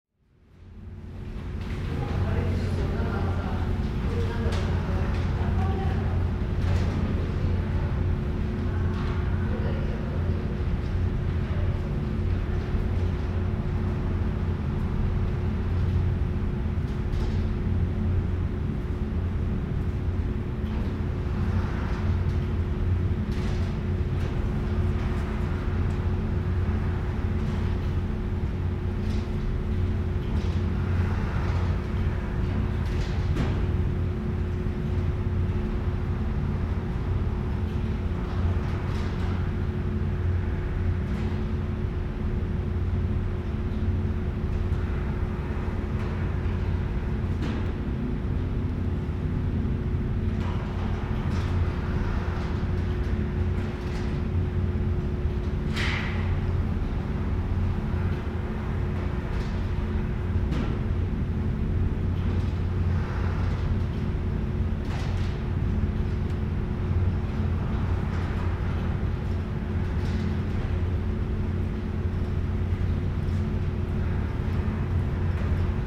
Calgary +15 Steven Ave bridge
sound of the bridge on the +15 walkway Calgary